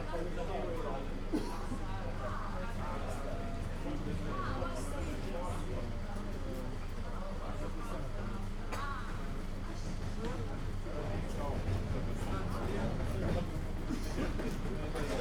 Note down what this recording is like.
street cafe, Pjazza Indipendenza, Victoria, Malta, people talking, a truck collects glass for recycling, quite rare in Malta. But this is Gozo. (SD702, DPA4060)